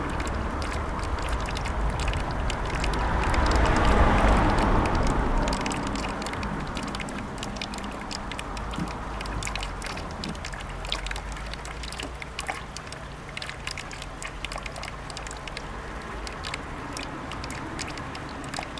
Rte de Seyssel, Chindrieux, France - Bassin de Praz
Le bassin fontaine de Praz au bord de la RD 991 construit en 1877 c'est l'année de l'invention de l'enregistrement sonore par Thomas Edison et Charles Cros. Une belle halte pour les cyclistes assoiffés. des fagots d'osier baignent dans l'eau.
France métropolitaine, France, July 2022